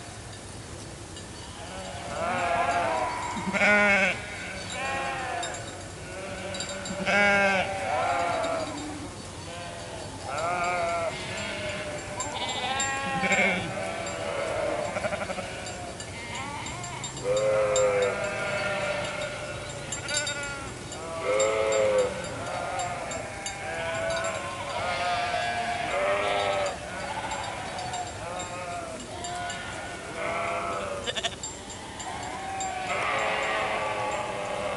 Unnamed Road, Isle of Bute, UK - Sheeps Lament at the Edge of St. Blanes Chapel
Recorded with a pair of DPA4060s and a Tascam DR-100 MKIII
16 July 2018